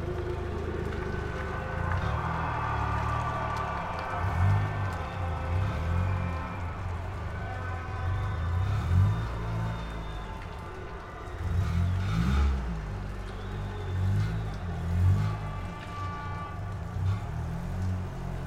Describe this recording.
Georgia national rugby team is winning Europe Championship 2022. It is raining and drizzling. External perspective of the stadium. IRT Cross, AE5100, Zoom F6.